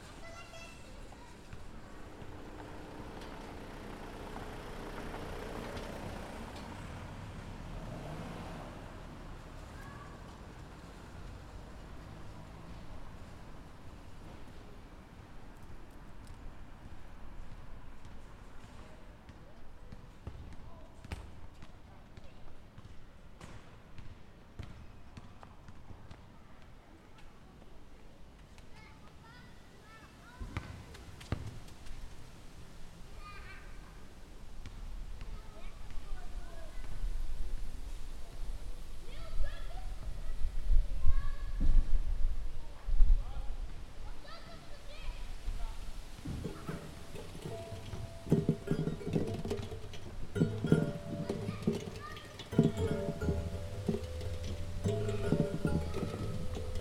(description in English below)
Dit speelobject heeft een hoop tumult in de wijk veroorzaakt. Het geluid ontstaat doordat een balletje tegen platen aanslaat in een ronddraaiende schijf. Een aantal buurtbewoners vond het geluid te hard en heeft erop gestaan dat het geluid gedempt zou worden. Dit is gebeurd in de vorm van een balletje dat minder geluid maakt.
This play object has caused a lot of uproar in the district. The sound comes from a ball that strikes against plates in a rotating disk. Some residents found the sound too loud and insisted that the sound would be muffled. This is done in the form of a ball that makes less noise.